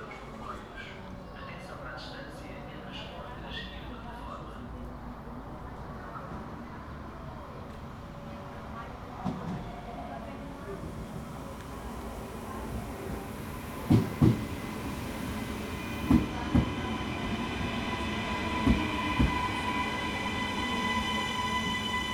Train Station - Nine, 4775-446, Portugal - Train Station - Nine (Portugal)